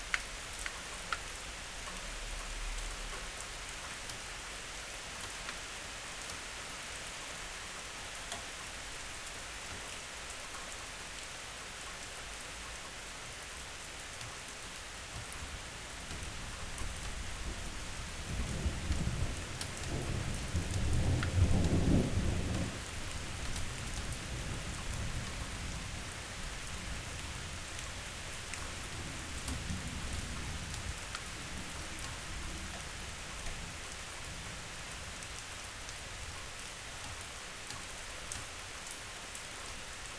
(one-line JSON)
{"title": "Berlin Bürknerstr., backyard window - rain and thunder", "date": "2016-05-30 14:05:00", "description": "a light thunderstorm arrives\n(Sony PCM D50, Primo EM172)", "latitude": "52.49", "longitude": "13.42", "altitude": "45", "timezone": "Europe/Berlin"}